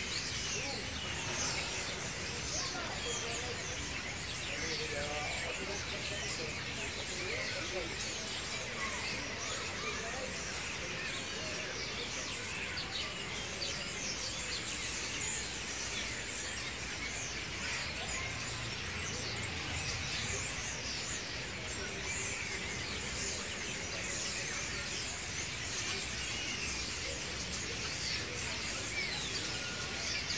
Jardin Thiole, Liberation, Nice, France - Evening starlings and children
Sitting below a tree with the recorder pointing straight up at the noisy starlings. To the lef tyou can hear the children chirping and calling (and banging on the slide) and to the right you can hear the trams go by. Early in the recording is a loud bang which the starlings react to instantly.
(recorded with Zoom H4n internal mics)